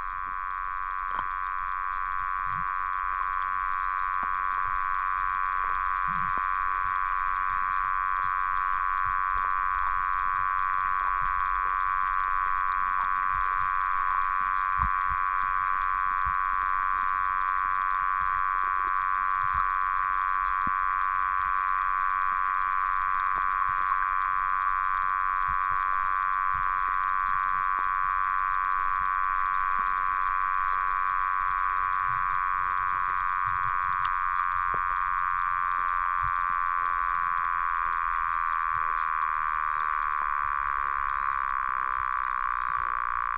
Lake Kermezys, Lithuania, underwater sound
Underwater sounds in lake Kermezys. Intensity varies depending from the sun intensity.